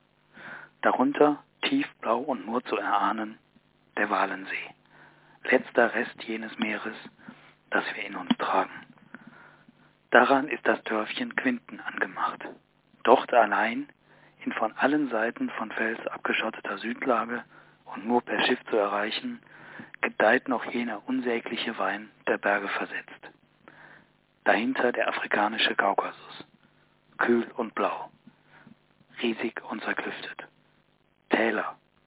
Auf dem Chäserugg - Der Wettermacher, Peter Weber 1993